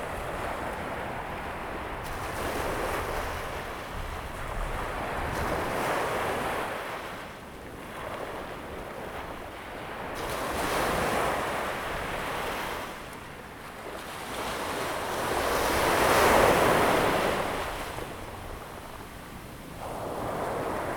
Checheng Township, Pingtung County - at the beach
at the beach, Sound of the waves
Zoom H2n MS+XY
Checheng Township, Pingtung County, Taiwan, April 2018